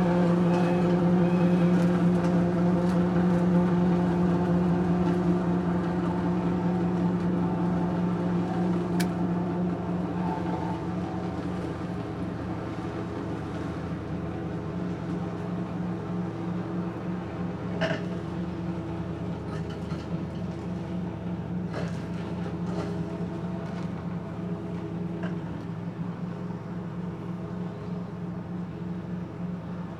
Morasko, Poligonowa Road, at garbage truck depot - industrial lawn mower and peafowls
recorded at a bus stop near garbage truck company. they keep a bunch of peafowls on their premises. bird's call can be heard a few times. a worker mows the grass around the place on a big mower. various objects get under the blades, sounds of them being mangled are to be heard.